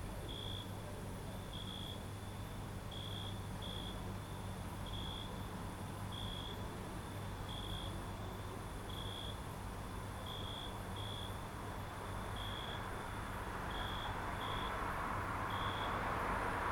Ulupınar Mahallesi, Çıralı Yolu, Kemer/Antalya, Turkey - Nighttime
Aylak Yaşam Camp, night time sounds: owl, people, cars, dogs